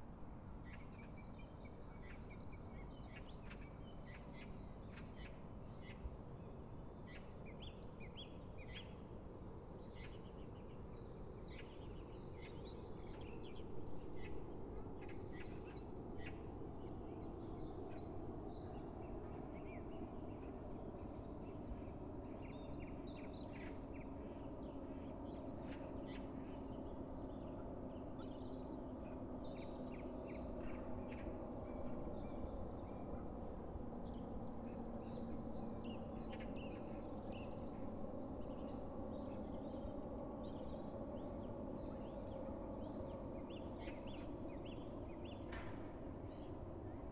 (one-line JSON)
{"title": "London, UK - Filter bed birds", "date": "2022-01-13 08:15:00", "description": "Dog walk through the filter beds capturing the sounds birdsong and occasional industry", "latitude": "51.56", "longitude": "-0.04", "altitude": "7", "timezone": "Europe/London"}